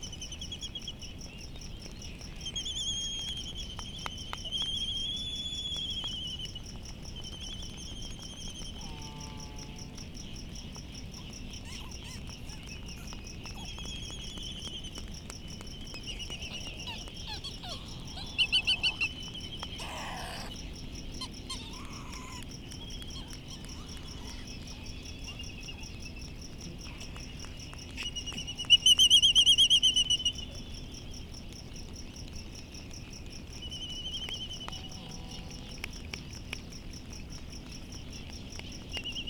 United States Minor Outlying Islands - Midway Atoll soundscape ...
Midway Atoll soundscape ... Sand Island ... bird calls ... laysan albatross ... bonin petrels ... white terns ... distant black-footed albatross ... black noddy ... and a cricket ... open lavaliers on mini tripod ... background noise and some windblast ... not quite light as petrels still leaving ...